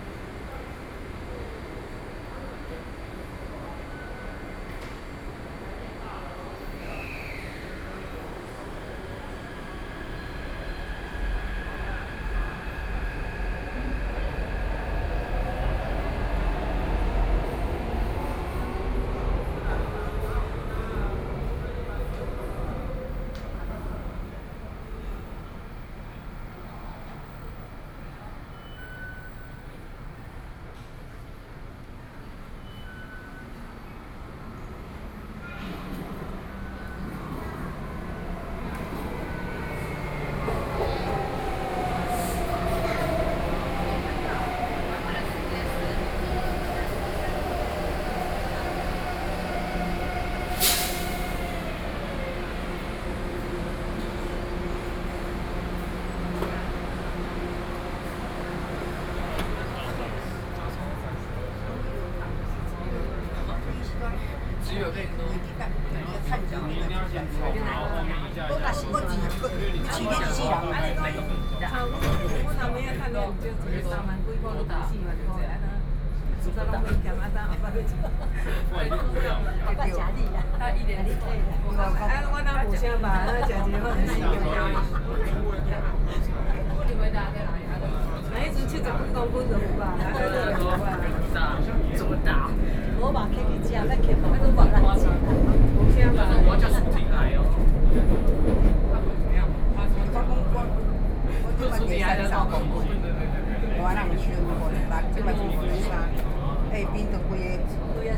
Tamsui-Xinyi Line, Taipei City - in the train
inside the MRT Train, Sony PCM D50 + Soundman OKM II